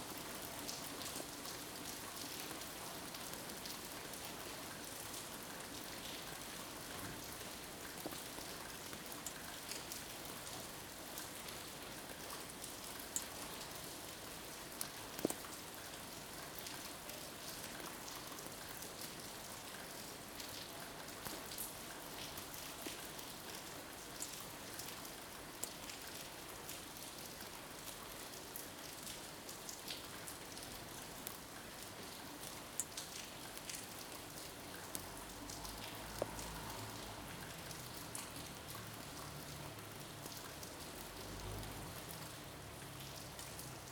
Carrer de Joan Blanques, Barcelona, España - Rain15042020BCNLockdown
Recorded from a window in Barcelona during the COVID-19 lockdown. Raw field recording of rain and some street noises, such as cars and birds. Made using a Zoom H2.